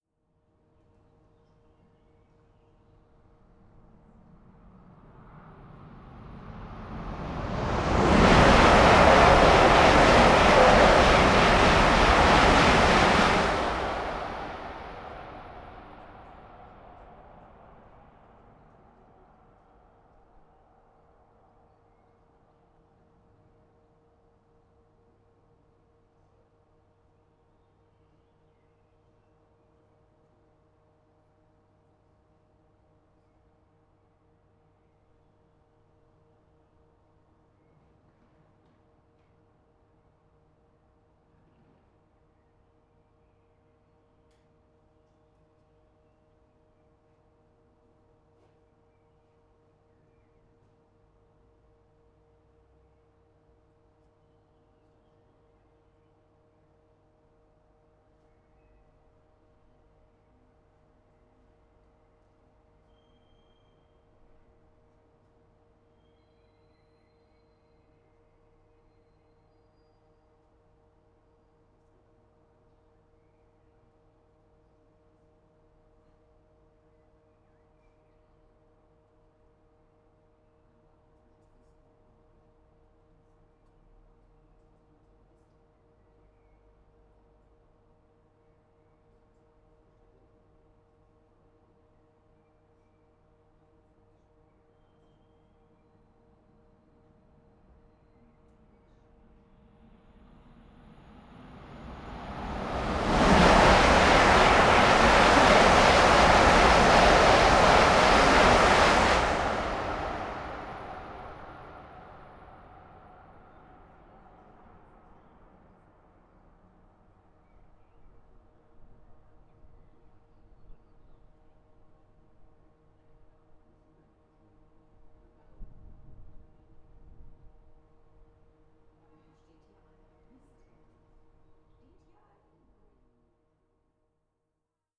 ICE station, Limburg an der Lahn, Deutschland - ambience, high speed train passing-by
Limburg Süd, ICE train station only for high speed ICE trains, two of them passing-by at 300km/h.
(Sony PCM D50)